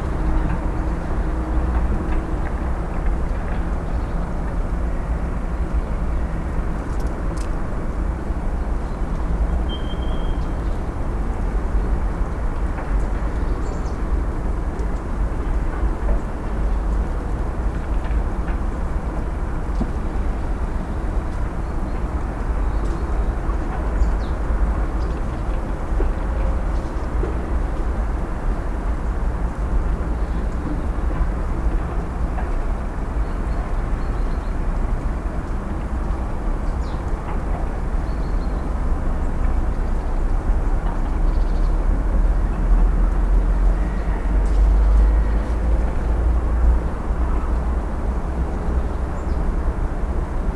maribor hillside panorama
the sounds of a city under construction reverberate around the valley and are recorded from the top of one of the hills that overlook it